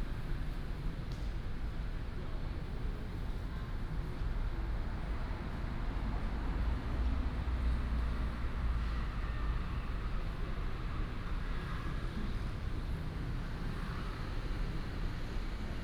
In the square of the temple, Birds sound, Traffic sound